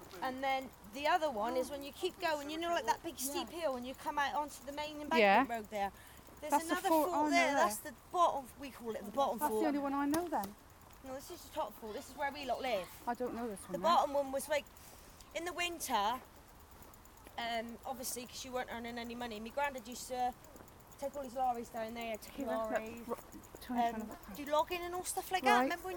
Efford Walk One: About the two forts - About the two forts
Plymouth, UK, 14 September 2010, ~08:00